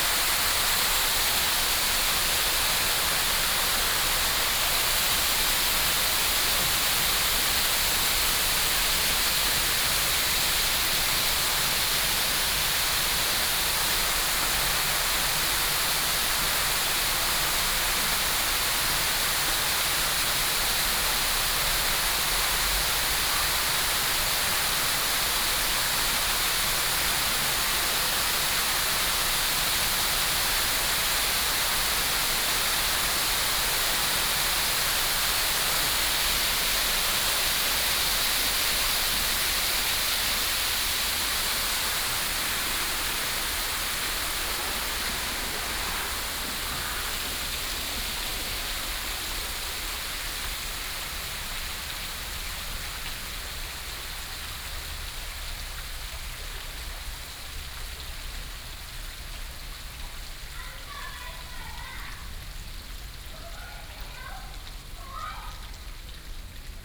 Daan Park Station, Da’an Dist., Taipei City - Fountain
Fountain
Binaural recordings
Sony PCM D100+ Soundman OKM II